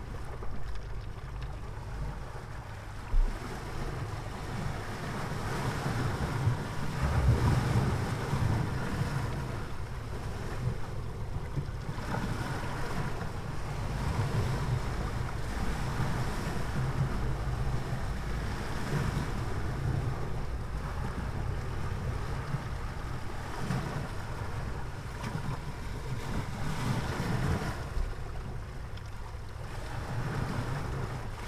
Altea, Alicante, España - Ventana del Bunker
Grabación metiendo los micros dentro de la tronera del bunker. El acceso al búnker está imposible por estar colmatado
Altea, Alicante, Spain, 29 April 2015, 13:00